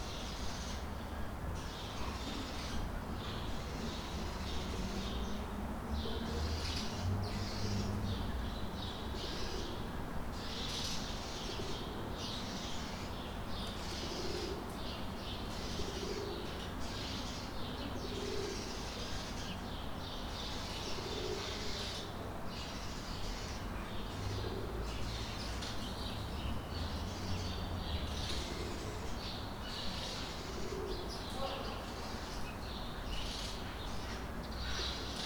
Boulevard de Lyon, Strasbourg, France - Birds and wind - courtyard
The birds in my courtyard, in the morning of the first day of confinment in France.
Recorded with ZOOM H1 on my balcony.
Grand Est, France métropolitaine, France, 30 October